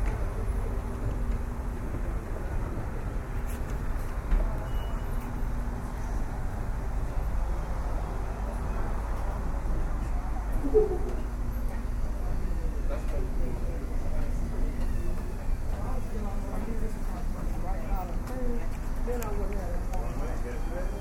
Atlanta, East Lake
MARTA East Lake to North Avenue 10/20/09 0637am